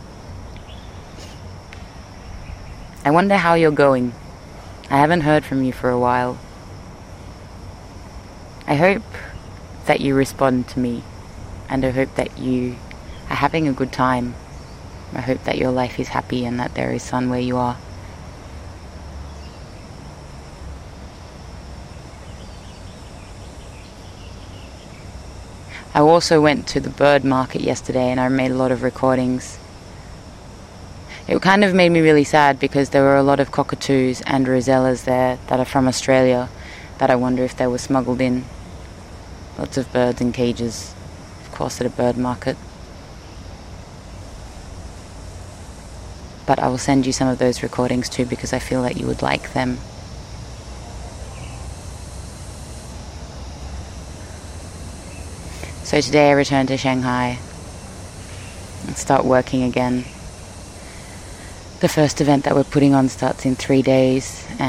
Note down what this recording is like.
lingnan university, cats, cicadas, bow tie